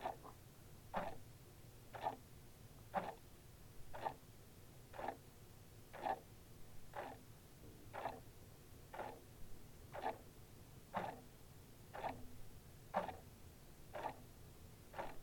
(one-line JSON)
{"title": "Westmount Place, Calgary, AB, Canada - Two Clocks Slightly Out of Sync", "date": "2015-12-02 23:27:00", "description": "Two clocks that are slightly out of sync placed side-by-side in my friend's apartment", "latitude": "51.05", "longitude": "-114.09", "altitude": "1054", "timezone": "America/Edmonton"}